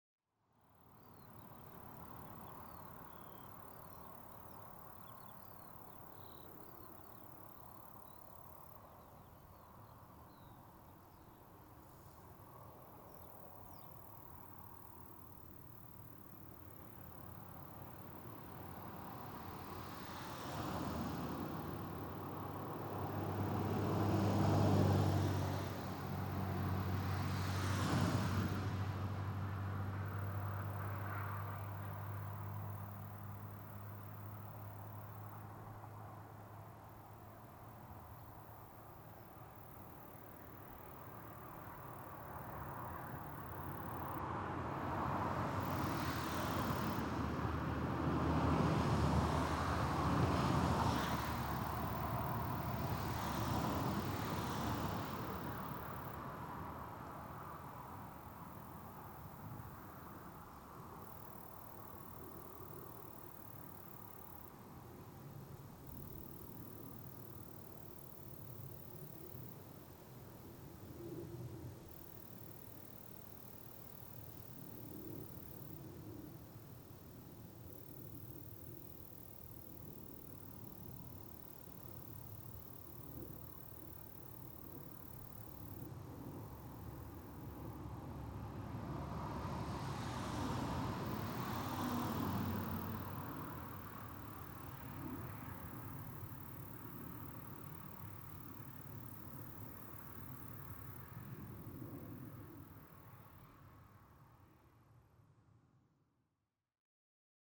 This was recorded during a soundwalk I organised in 2010 to coincide with World Listening Day. I had been making a radio show about my commute between Oxford and Reading along the A4074 and decided to share my explorations of the complex web of sounds - flora, fauna, agriculture, drivers, cyclists, pedestrians - and the associated beings impacted on either positively or negatively by the road. This section of the soundwalk documents a moment where I was trying to understand the different scales of sound involved in the never-ending din of the road, and the delicate song of the grasshoppers and crickets in the field directly beside it. This is a recording of the A4074 road in Oxfordshire, but it is also a document of listening. The pauses in between the cars when you can hear the trees sighing in the wind seem somehow very precious...